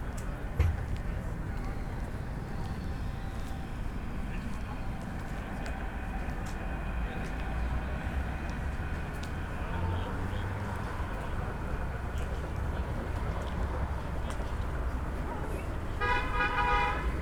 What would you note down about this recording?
summer evening, short walk in the allotment, many of the gardens left hand are closed due to the expansion of the planned A100 motorway. however, people live in the abandoned shacks under apparently precarious conditions. (Sony PCM D50, DPA4060)